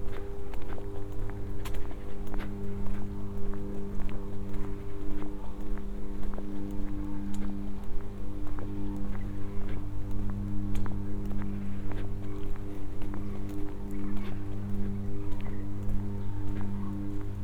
UAM Campus UAM Morasko - buzz around the campus
(binaural)
soundwalk around the campus of the UAM. the area is packed with various power generators and transformers. it's impossible to find a place around the campus where one doesn't hear the electric buzz of machinery and power circuits. a raft from one mass of drone into another. around 3:30 i'm walking by a bunch of trees. the rustle of leaves pierces through the electric rumble.
Poznań, Poland, 11 November 2014, 12:55